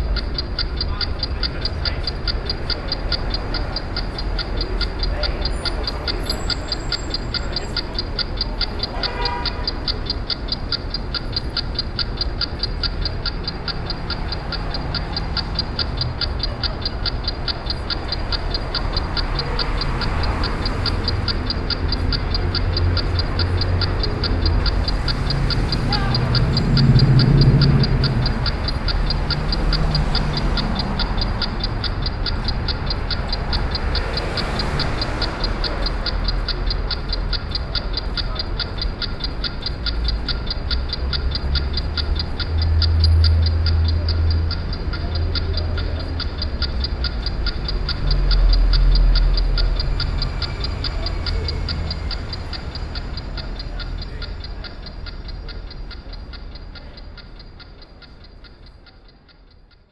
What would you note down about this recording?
Shop of watches, urban traffic.